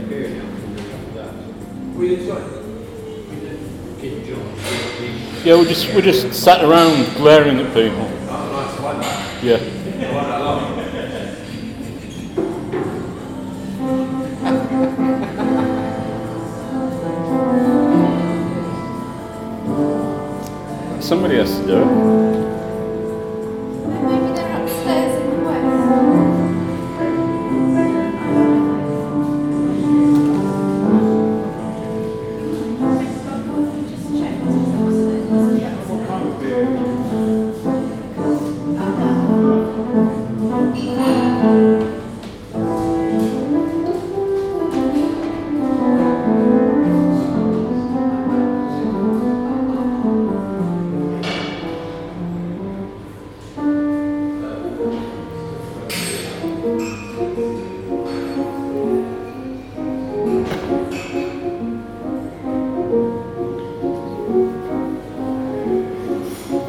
Newport, Isle of Wight, UK - cafe noise with piano
arts centre cafe, piano playing, conversation and crockery
28 November, 17:35